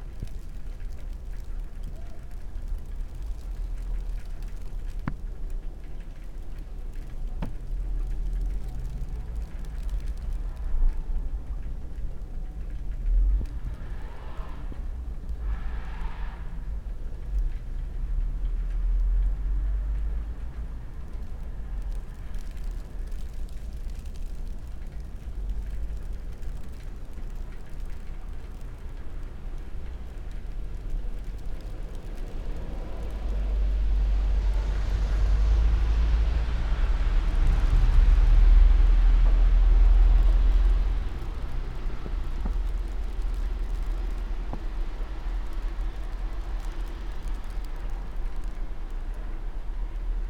while windows are open, Maribor, Slovenia - snowflakes on paper
snowflakes, paper, cars sliding on nearby street, snowplough, passers by, steps ...